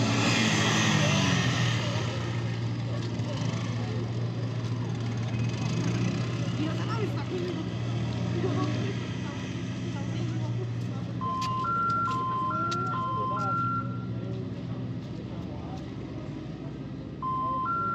Cra., Bogotá, Colombia - Ambiente Iglesia San Tarcisio
In this ambience you can hear the northern part of Bogotá where you can see the traffic of one of the streets and the busiest race in the Cedritos neighborhood in front of the church.
Región Andina, Colombia, May 2021